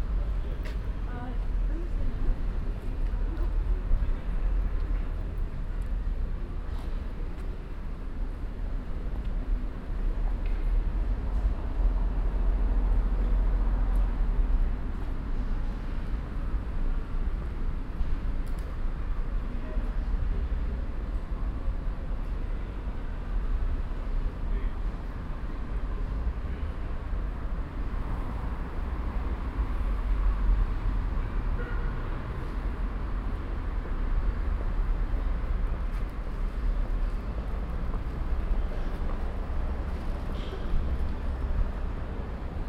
essen, kopstadtplatz
Sitting on a bench in the early afternoon. General atmosphere of the place.
Projekt - Klangpromenade Essen - topographic field recordings and social ambiences
Essen, Germany, 9 June, ~23:00